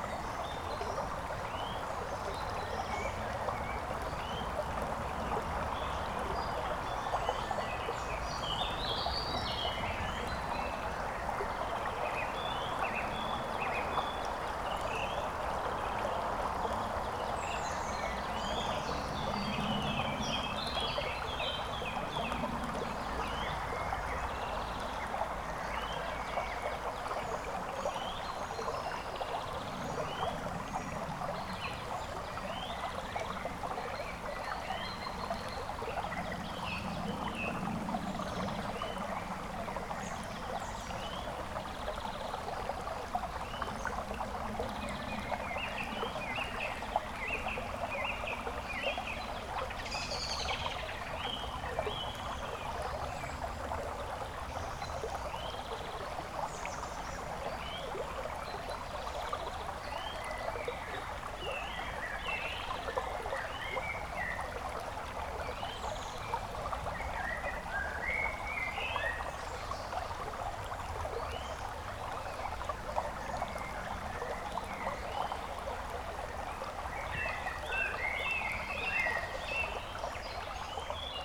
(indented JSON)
{
  "title": "Hinterweidling, Weidlingbach - alluvial forest with small stream and birds",
  "date": "2012-06-08 14:00:00",
  "description": "alluvial forest(softwood) with small stream and forest birds singing. Zoom H1 (XY stereo) in DIY blimp type fluffy windshield.",
  "latitude": "48.27",
  "longitude": "16.27",
  "altitude": "252",
  "timezone": "Europe/Vienna"
}